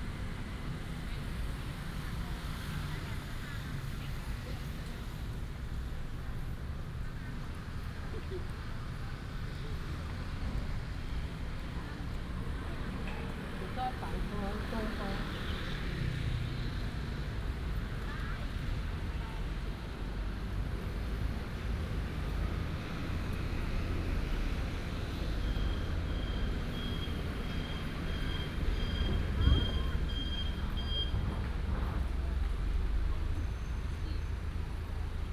仁祥公園, Zhongli Dist., Taoyuan City - in the Park
in the Park, Traffic sound, Binaural recordings, Sony PCM D100+ Soundman OKM II
Taoyuan City, Taiwan, 29 November, 08:35